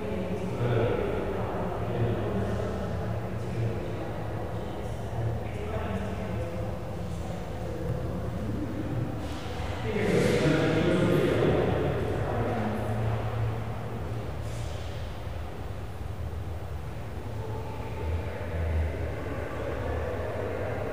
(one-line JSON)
{"title": "Hepworth Wakefield, West Yorkshire, UK - Hepworth reverberations 2", "date": "2015-02-06 15:47:00", "description": "Another blurred conversation and distant ambient reverb in the Hepworth Wakefield.\n(rec. zoom H4n)", "latitude": "53.68", "longitude": "-1.49", "altitude": "25", "timezone": "Europe/London"}